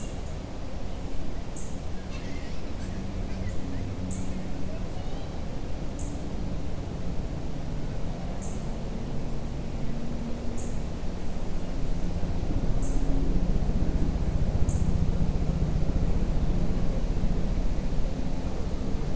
Right next to a bridge that passes over the creek at Tanyard Creek Trail. People are traveling over the bridge on the right side, and water can be heard faintly over the sound of trees blowing in the wind. Birds and traffic create sounds in the distance. A low cut was added in post.
[Tascam Dr-100mkiii & Primo Clippy EM-272]